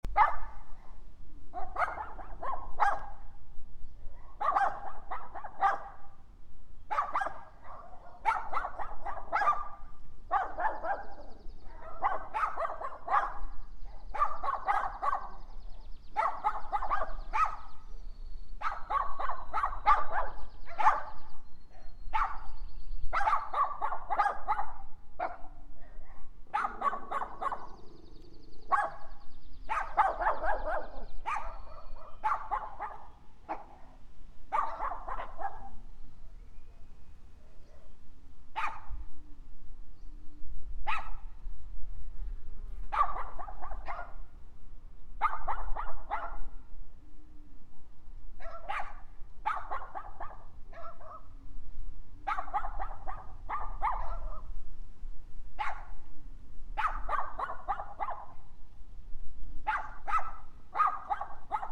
La Barriada B, La Cuesta, Santa Cruz de Tenerife, Spanien - perros pequeños
Little dogs doing their protecting dialog.